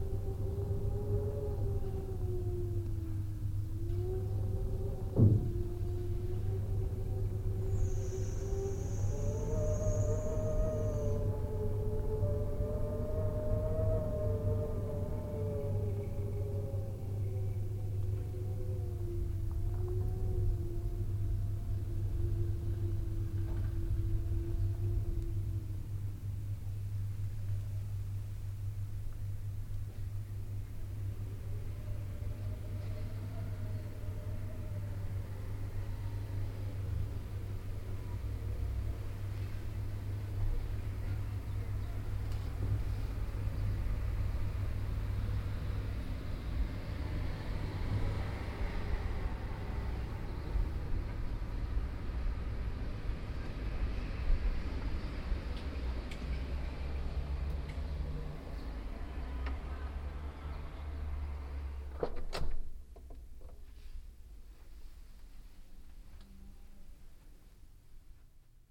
Hotel International, Zagreb
draught in the corridor 9thfloor and the sound from the open window
City of Zagreb, Croatia, 9 June